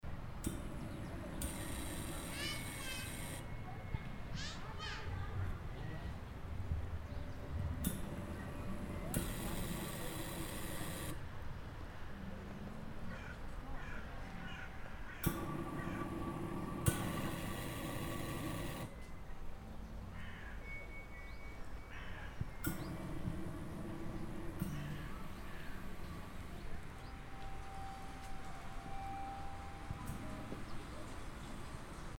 Feuerskulptur im Marzili
Jetzt Kunst No.3, Kunstinterventionen im Marzilibad, Feuerskulptur von Paul Wiedmer, ab und zu macht sich eine Flamme bemerkbar, ein Feuer das sich ins rechte Licht rückt und dem Herbst die Wärme zeigt.
Bern, Schweiz, 16 October 2011, ~15:00